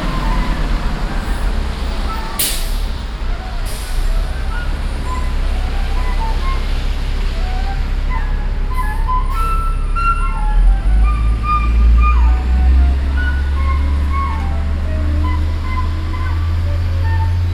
Washington DC, E St NW, Flutist
USA, Virginia, Washington DC, Flutist, Coltrane, My favorite things, Door, Road traffic, Binaural